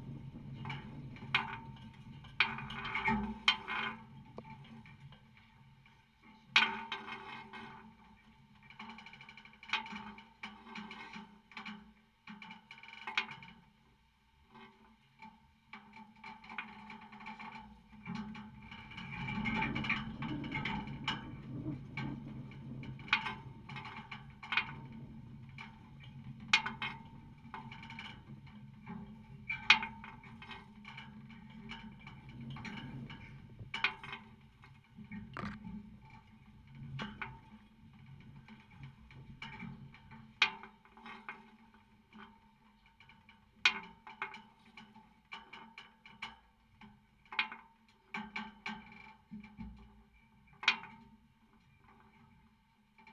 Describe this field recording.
aluminum column holding big concert cage's roof. contact microphone